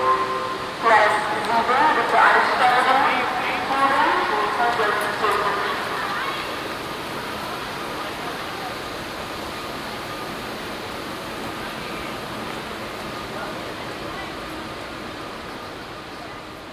cologne, hauptbahnhof, gleis, ansagen - cologne, hauptbahnhof, gleis 7, ansage
soundmap: köln/ nrw
lautsprecheransage auf gleis 7, mittags
project: social ambiences/ listen to the people - in & outdoor nearfield